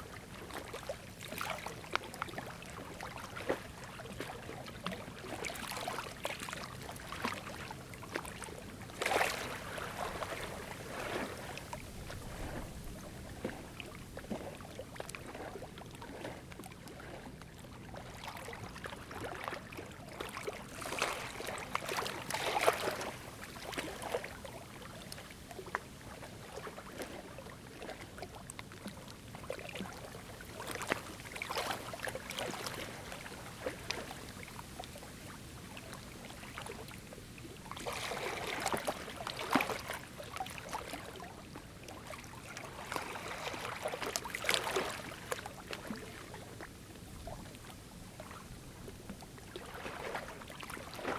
Simpson Lake Shore, Valley Park, Missouri, USA - Simpson Lake Shore
Waves lapping at shore of Simpson Lake. Also sound of wind blowing through dry grass and distant traffic